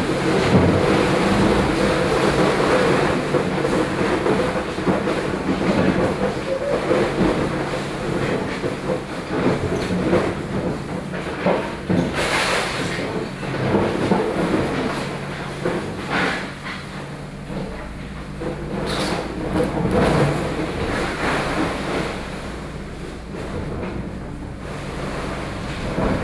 {"title": "Ln., Ren’ai St., Sanchong Dist., New Taipei City - Construction", "date": "2012-11-09 11:52:00", "latitude": "25.07", "longitude": "121.50", "altitude": "12", "timezone": "Asia/Taipei"}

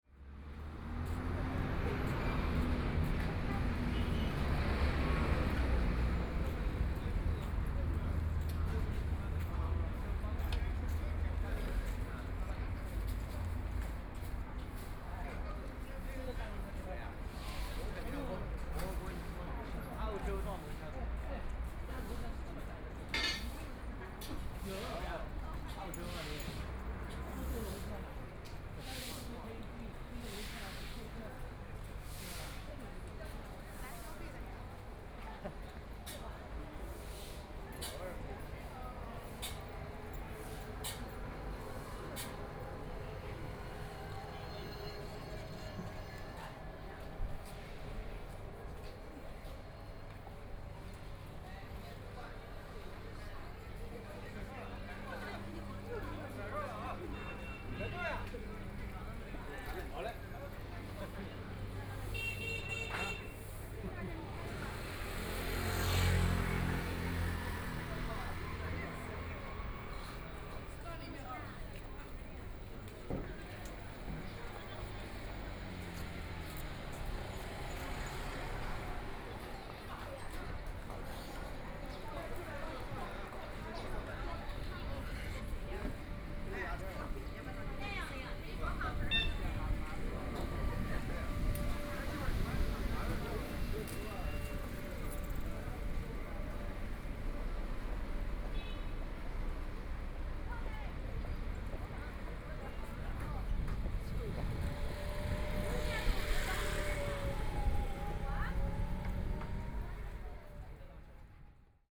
{"title": "Shouning Road, Shanghai - Follow the footsteps", "date": "2013-12-01 12:09:00", "description": "Follow the footsteps, Walking in the small streets, Binaural recordings, Zoom H6+ Soundman OKM II", "latitude": "31.23", "longitude": "121.48", "altitude": "14", "timezone": "Asia/Shanghai"}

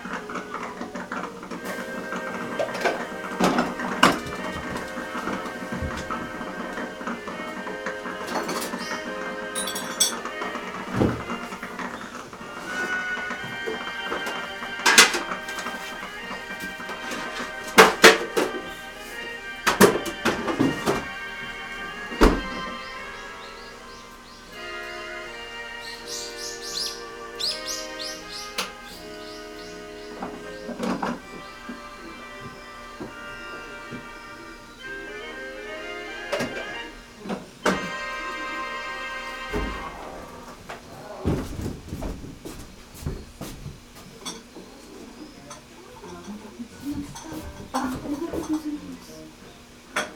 {"title": "tea cafe house, Kokedera, Kyoto, Japan - rivers", "date": "2014-10-30 11:32:00", "latitude": "34.99", "longitude": "135.68", "altitude": "54", "timezone": "Asia/Tokyo"}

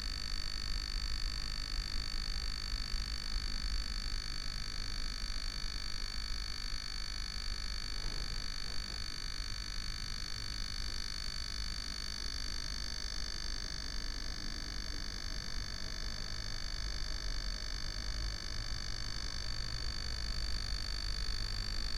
{"title": "berlin bürknerstr. - defective device, electric buzz", "date": "2021-02-08 23:10:00", "description": "Berlin Bürknerstr., house entrance, defective electric device buzzing\n(Sony PCM D50, Primo EM172)", "latitude": "52.49", "longitude": "13.43", "altitude": "48", "timezone": "Europe/Berlin"}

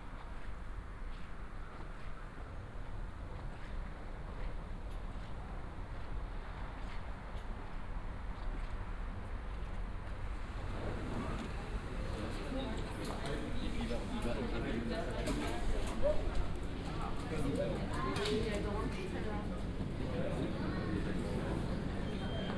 {"title": "Gare d'Aix-en-Provence TGV, Aix-en-Provence, France - hall ambience, walk", "date": "2014-01-11 07:55:00", "description": "TGV train station ambience, Saturday morning, people waiting for departure", "latitude": "43.46", "longitude": "5.32", "altitude": "188", "timezone": "Europe/Paris"}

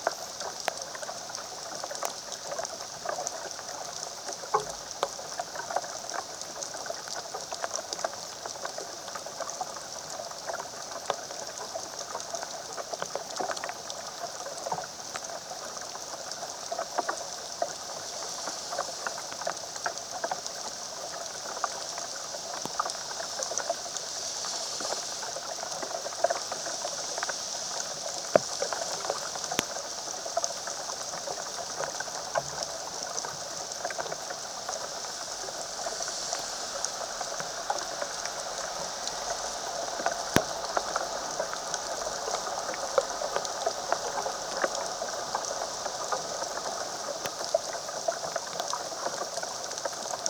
{"title": "Magdalenski park, Maribor, Slovenia - branches in the rain", "date": "2012-08-26 12:19:00", "description": "rain falling on the branches of a large oak tree, recorded with contact microphones", "latitude": "46.55", "longitude": "15.65", "altitude": "279", "timezone": "Europe/Ljubljana"}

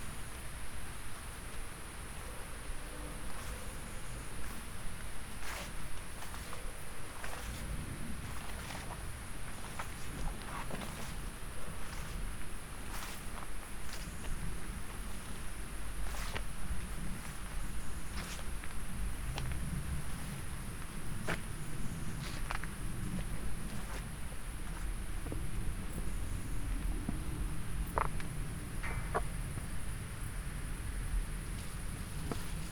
{"title": "Studenice, Slovenia - cemetery", "date": "2014-07-27 20:31:00", "description": "quiet cemetery with iron gates, overgrown with wild ivy", "latitude": "46.30", "longitude": "15.62", "altitude": "291", "timezone": "Europe/Ljubljana"}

